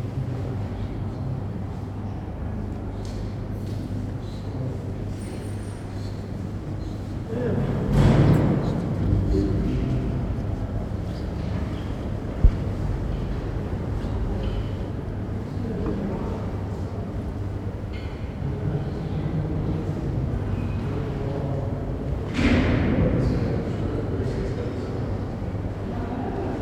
Eating sweet cake - Saturday afternoon at the empty passage pattiserie